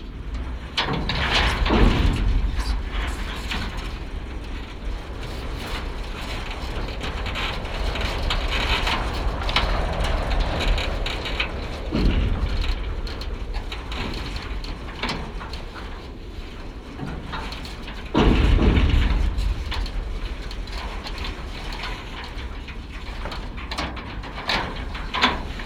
Drobės g., Kaunas, Lithuania - Soccer field safety net
4 channel contact microphone recording of a soccer field safety net. Irregular impact of the wind moves the net and it's support poles, resulting in rustling and metallic sounds. Recorded with ZOOM H5.
Kauno apskritis, Lietuva, 22 April 2021, 16:20